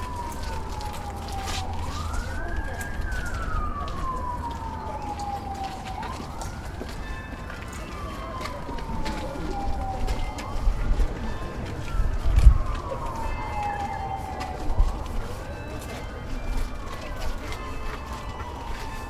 The carillion of the Saint Peter and Pavel Catedral at Vysehrad.
12 March 2011